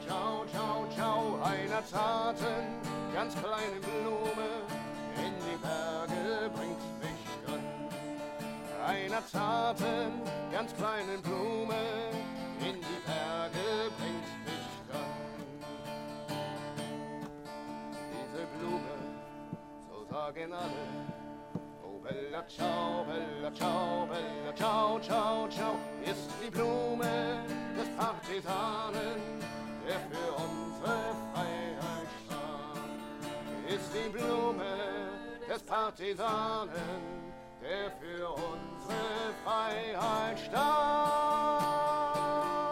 Michael Kühl, Mitglied der VVN-BdA, spielt Bella Ciao
Hamburg, 1 August 2009